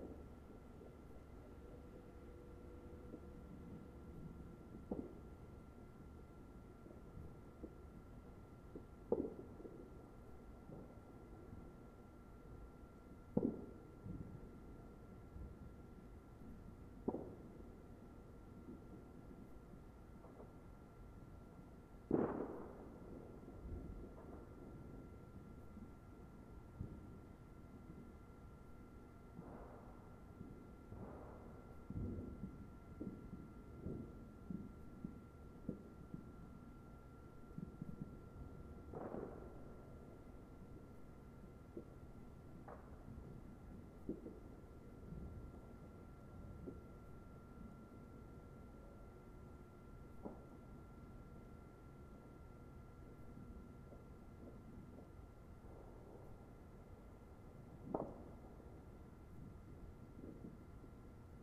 South Deering, Chicago, IL, USA - Guns of New Year 2014
Recording gun shots from neighbors to bring in the new year.
1 January